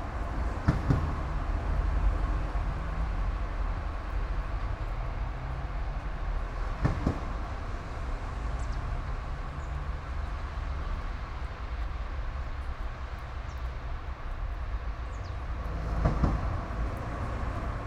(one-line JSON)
{"title": "all the mornings of the ... - jan 23 2013 wed", "date": "2013-01-23 08:57:00", "latitude": "46.56", "longitude": "15.65", "altitude": "285", "timezone": "Europe/Ljubljana"}